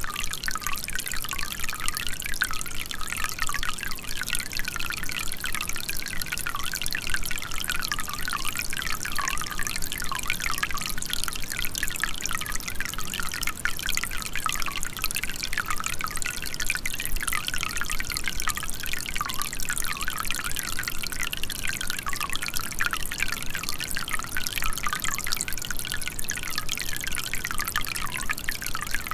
Šlavantai, Lithuania - Water spring running through a pipe
A stereo recording of a water spring running through a specially installed PVC pipe. Some forest ambience and wind can be heard as well. Recorded using ZOOM H5.